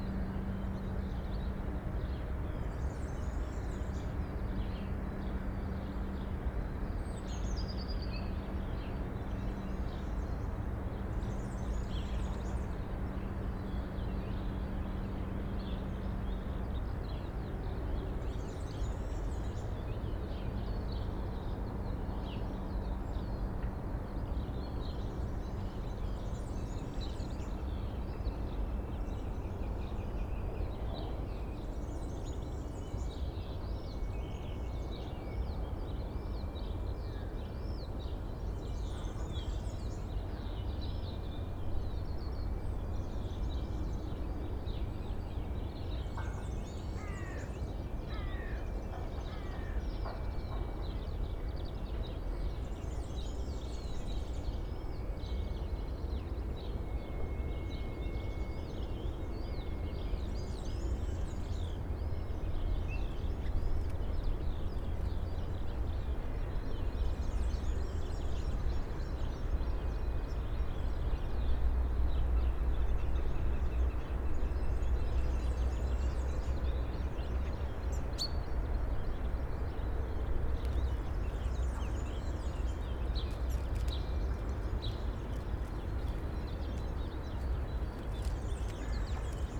Friedhof Columbiadamm, Berlin - morning ambience, birds, traffic
morning ambience on graveyard Friedhof Columbiadamm. Rush hour traffic noise, aircrafts, construction sounds, many birds: woodpecker, hawk, tits and sparrows in a bush, crows, warbler, finches, a serin (Girlitz) quite close.
(SD702, S502 ORTF)